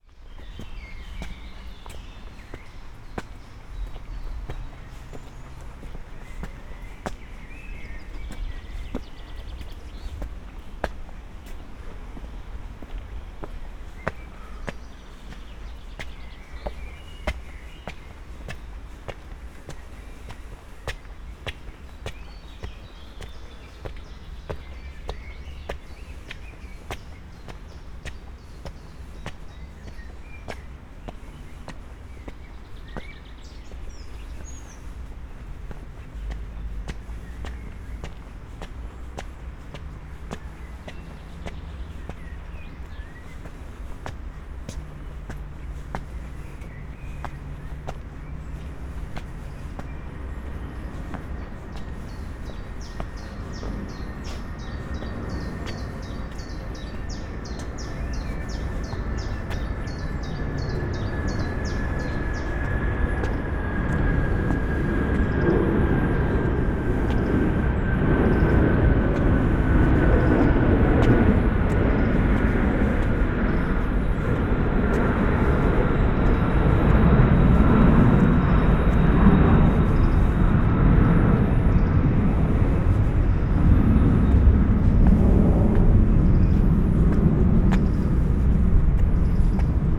Vallée de la Pétrusse, Luxemburg - climbing up the stairs
climbing up the steep stairs to the upper Luxembourg, from the bottom of Vallée de la Pétrusse. An aircraft is flying over, creating heavy drones in the narrow valley.
(Olympus LS5, Primo EM172)
Luxemburg City, Luxembourg, 5 July 2014